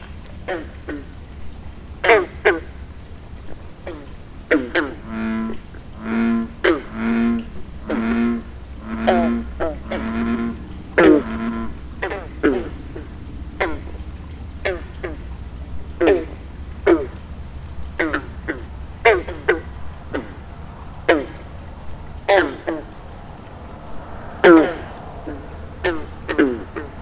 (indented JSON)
{
  "title": "Cornwall, VT, USA - Frog pond",
  "date": "2014-05-24 23:00:00",
  "description": "Late evening bullfrogs around a large landscaped pond.",
  "latitude": "43.94",
  "longitude": "-73.21",
  "altitude": "132",
  "timezone": "America/New_York"
}